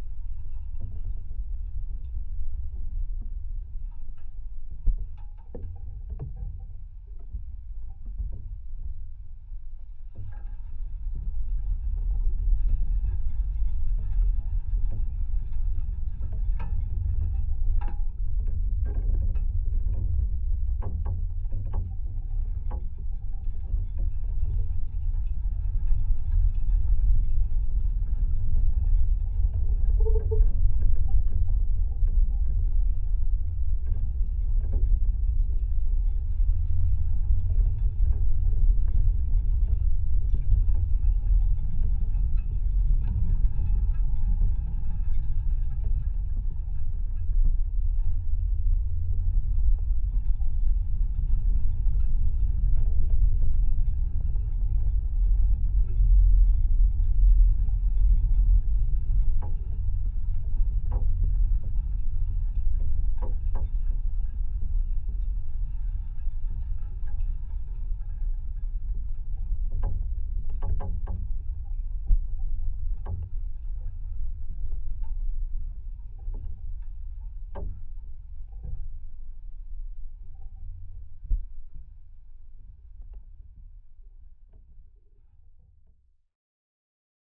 Pačkėnai, Lithuania, barbed wire
a piece of rusty barbed wire, probably in soviet times here was a pasture. contact microphones recording. low frequencies - listen with good speakers or headphones.